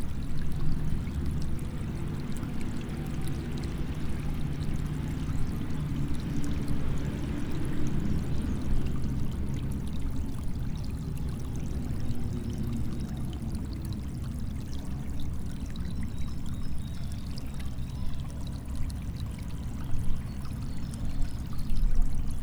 Rue des Roises, Piney, France - Parc autour du collège des Roises
Espace vert entourant le collège des Roises avec un petit court d'eau
Grand Est, France métropolitaine, France, 16 January 2022, 11:15